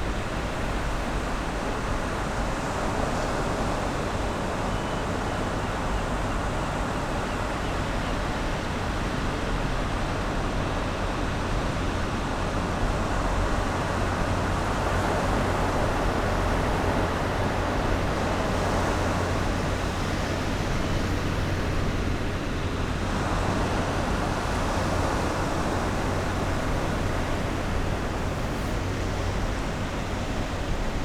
{
  "title": "Scarborough Lifeboat, Foreshore Rd, Scarborough, UK - Falling tide ...",
  "date": "2019-10-17 10:50:00",
  "description": "Falling tide ... on the slip way of the RNLI station ... lavalier mics clipped to bag ...",
  "latitude": "54.28",
  "longitude": "-0.39",
  "altitude": "6",
  "timezone": "Europe/London"
}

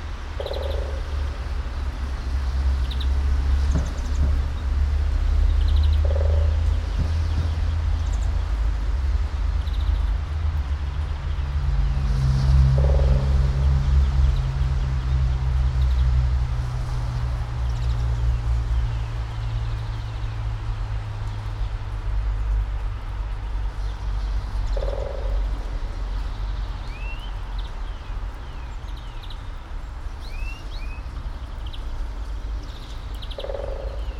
{
  "title": "all the mornings of the ... - mar 29 2013 fri",
  "date": "2013-03-29 07:03:00",
  "latitude": "46.56",
  "longitude": "15.65",
  "altitude": "285",
  "timezone": "Europe/Ljubljana"
}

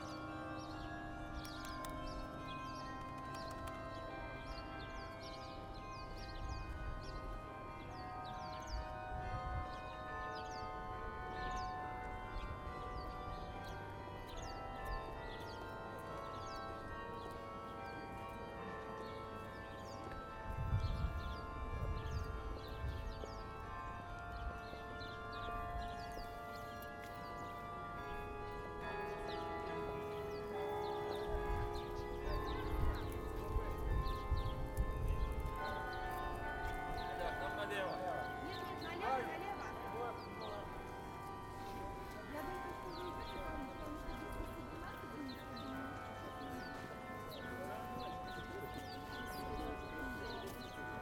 {
  "title": "Peter and Paul Fortress, Saint-Petersburg, Russia - On the Peter and Paul Cathedral square",
  "date": "2015-03-21 11:50:00",
  "description": "SPb Sound Map project\nRecording from SPb Sound Museum collection",
  "latitude": "59.95",
  "longitude": "30.31",
  "altitude": "8",
  "timezone": "Europe/Moscow"
}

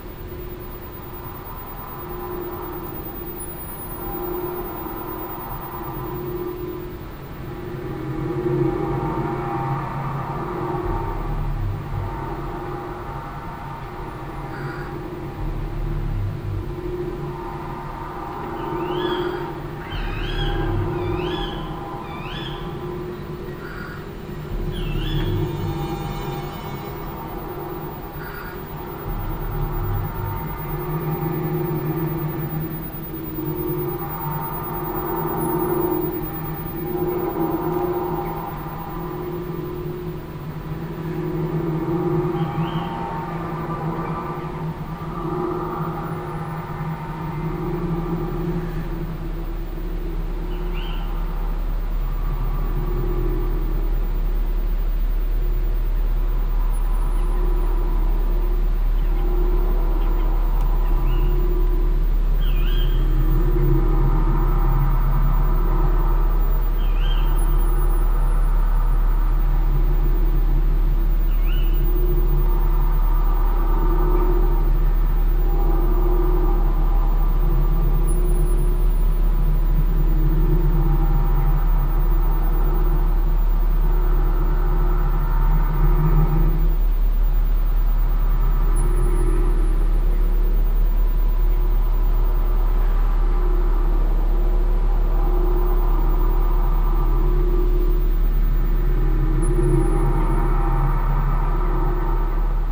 {
  "title": "lippstadt, light promenade, installation the mediator",
  "date": "2009-10-17 14:10:00",
  "description": "the installation is part of the project light promenade lippstadt curated by dirk raulf\nfurther informations can be found at:\nsound installations in public spaces",
  "latitude": "51.68",
  "longitude": "8.34",
  "altitude": "79",
  "timezone": "Europe/Berlin"
}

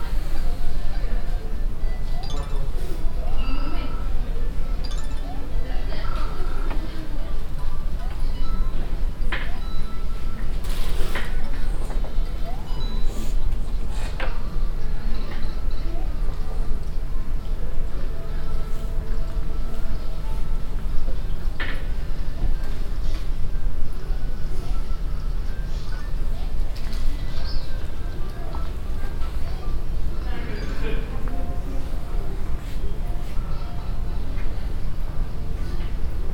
{
  "title": "dortmund, markt, inside ware house",
  "description": "inside a sport and game ware house - moving stairwase, steps and game sounds\nsoundmap nrw - social ambiences and topographic field recordings",
  "latitude": "51.51",
  "longitude": "7.47",
  "altitude": "94",
  "timezone": "Europe/Berlin"
}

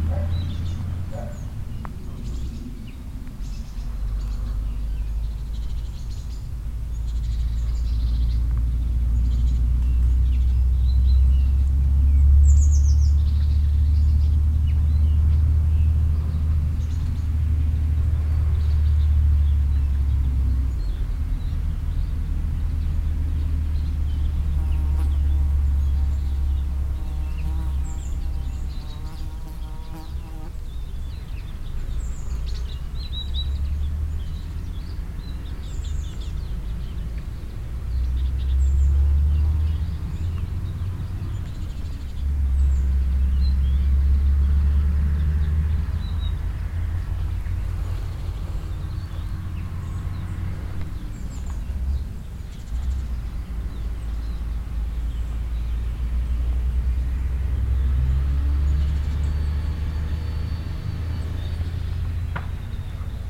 {
  "title": "walsdorf, birds, bees and motor engines - walsdorf, birds, bees and motor engines",
  "date": "2011-09-18 16:18:00",
  "description": "In between trees and bushes near to a field. The sound of several birds and the tree leaves in the mild late summer wind. A bee flying close to the microphones and from the distance the sound of cars and other motor engines at work.\nWalsdorf, Vögel, Bienen und Motoren\nZwischen Bäumen und Büschen neben einem Feld. Das Geräusch von mehreren Vögeln und der Baumblätter im milden Spätsommerwind. Eine Biene fliegt nahe an der Mikrophon heran und aus der Ferne das Geräusch von Autos und anderen Motoren bei der Arbeit.\nWalsdorf, abeilles et moteurs\nEntre des arbres et des buissons, à proximité d’un champ. Le bruit de plusieurs oiseaux et des feuilles des arbres balancées par le doux vent de la fin de l’été. Une abeille volant près du microphone et, dans le lointain, le bruit de voitures et d’autres moteurs en action.",
  "latitude": "49.92",
  "longitude": "6.17",
  "timezone": "Europe/Luxembourg"
}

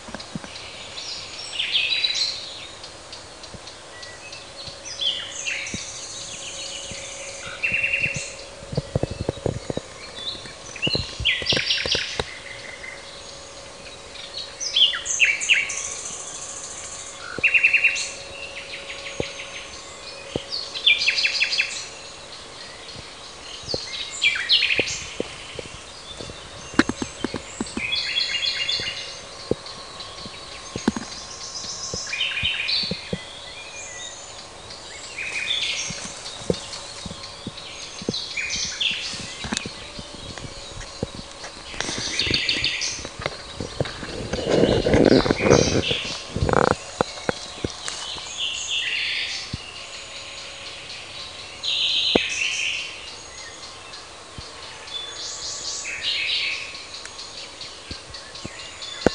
{
  "title": "Parco del Roccolo, Parabiago, Usignolo al parco del Roccolo",
  "date": "2003-06-15 16:01:00",
  "description": "usignolo al parco del Roccolo (giugno 2003)",
  "latitude": "45.54",
  "longitude": "8.93",
  "altitude": "177",
  "timezone": "Europe/Rome"
}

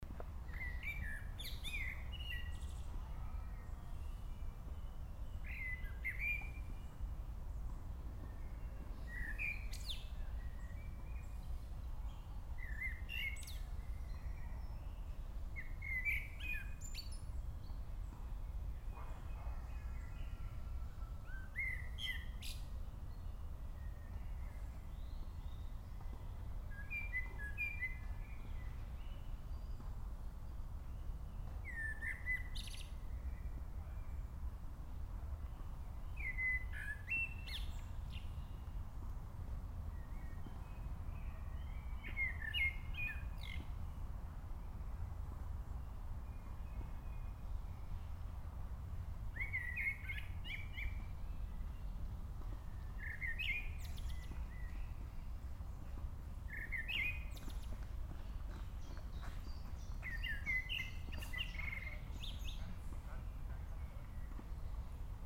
{
  "title": "blackbird, evening - Köln, evening blackbird",
  "description": "\"Stadtwald\" park, Cologne, evening, may 29, 2008. - project: \"hasenbrot - a private sound diary\"",
  "latitude": "50.93",
  "longitude": "6.90",
  "altitude": "57",
  "timezone": "GMT+1"
}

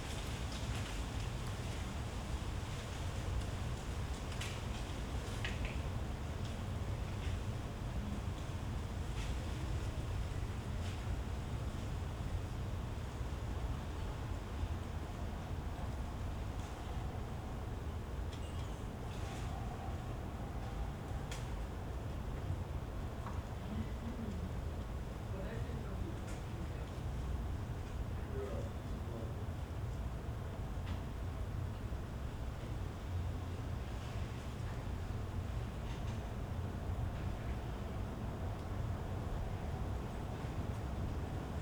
{
  "title": "Berlin Bürknerstr., backyard window - falling leaves",
  "date": "2012-10-21 22:45:00",
  "description": "wind, falling leaves, some inside voices, in the backyard at night.",
  "latitude": "52.49",
  "longitude": "13.42",
  "altitude": "45",
  "timezone": "Europe/Berlin"
}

